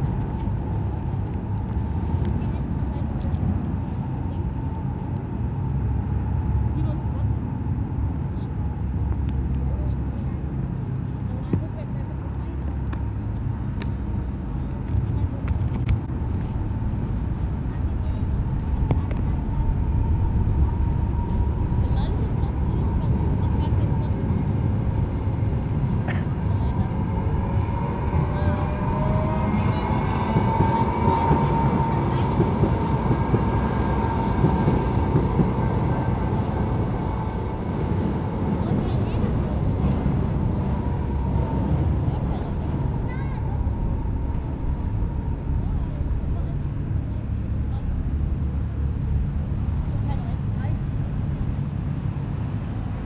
leipzig lindenau, lindenauer markt
am lindenauer markt. straßenbahnen und autos, im hintergrund schwatzende kinder.